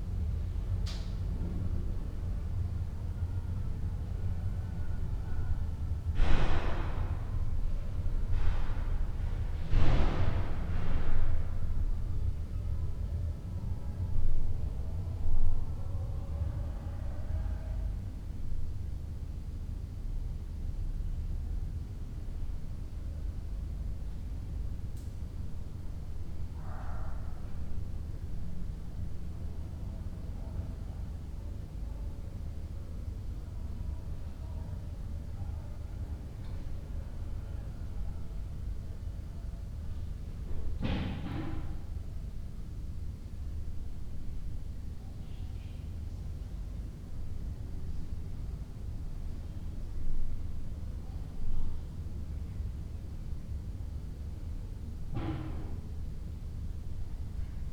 inner yard window, Piazza Cornelia Romana, Trieste, Italy - sunday night